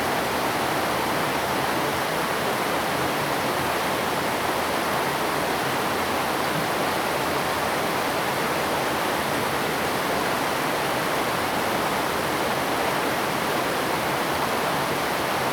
Datun River, 淡水區, New Taipei City - Stream sound
Stream sound
Zoom H2n MS+XY
New Taipei City, Taiwan, 15 April